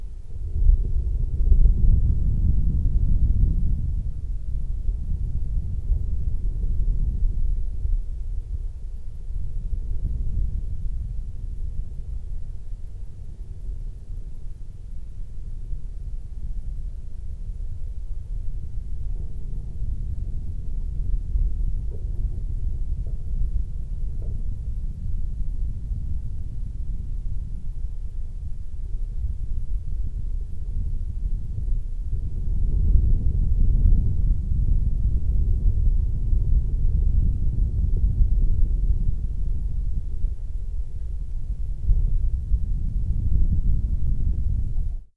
World Listening Day - Wind howling in chimney flue.